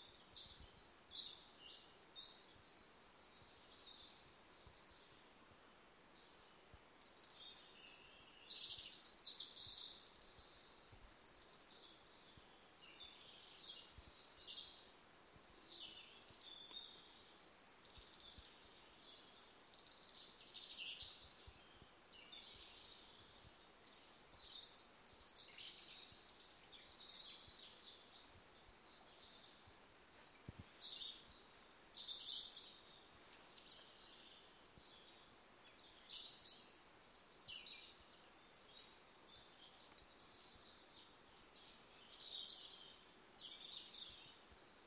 Birds in the valley
Birds singing in the valley of Aiguebrun.